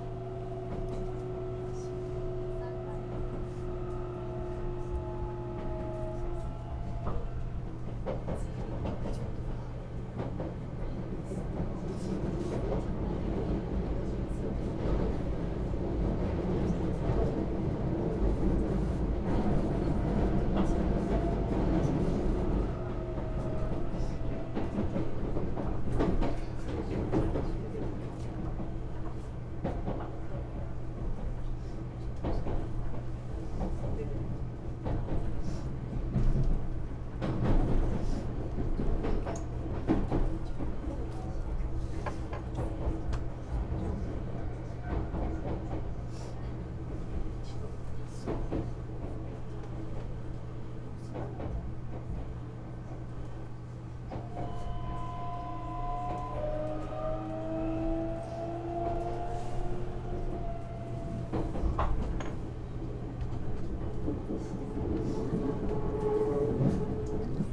skyliner, express train, from narita airport to ueno station, train passes different space conditions
Yachiyo, Chiba Prefecture, Japan, 7 November, ~19:00